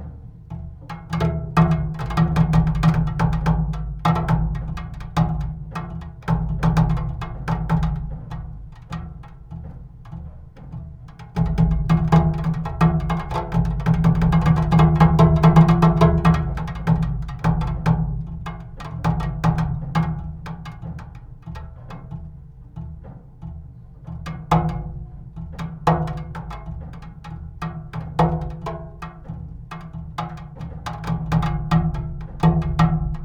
drizzle. rain pipe on the closed school. magnetic contact microphones